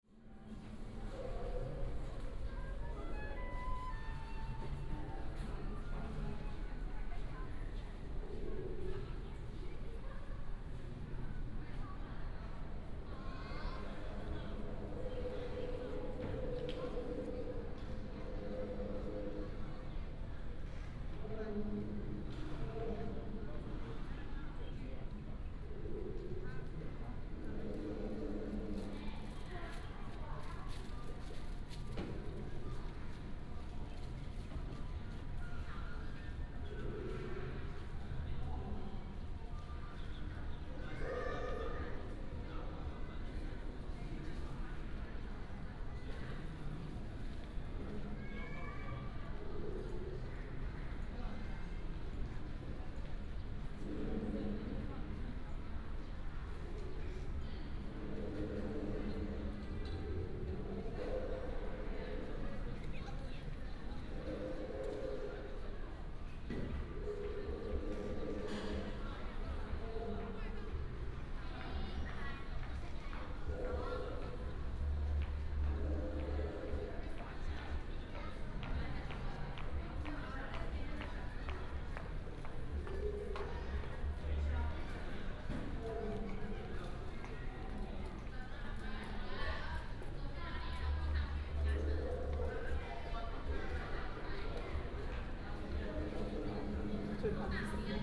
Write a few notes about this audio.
In the museum hall, Many students